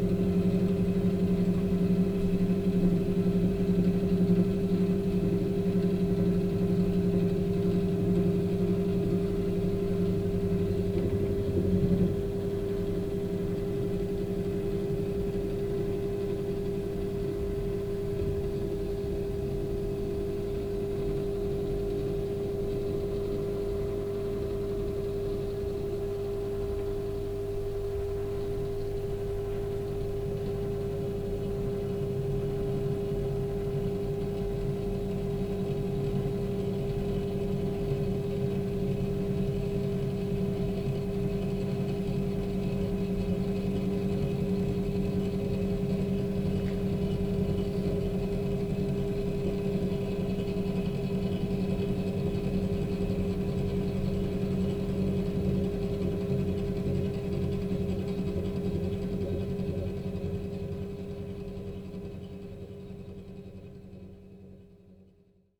Lörick, Düsseldorf, Deutschland - Düsseldorf, Wevelinghoferstr, kybernetic art objects
The sound of kybernetic op art objects of the private collection of Lutz Dresen. Here no.02 an rotating object by zero artist Uecker
soundmap nrw - topographic field recordings, social ambiences and art places
Düsseldorf, Germany, 25 April 2015